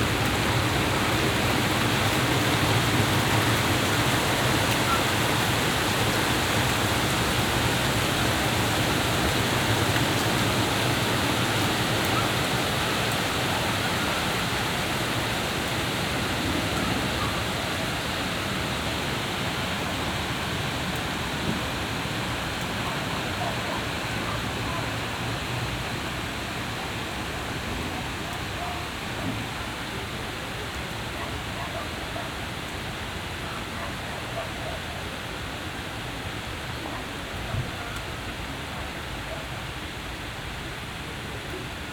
binaural recording. standing on a balcony during heavy shower. rain fading in and out, really quickly form wall of water to sparse drops. neighbor talking on the phone. distant shouts, kids playing outside despite unpleasant weather.
Poznan, Poland, 13 July 2014, 20:20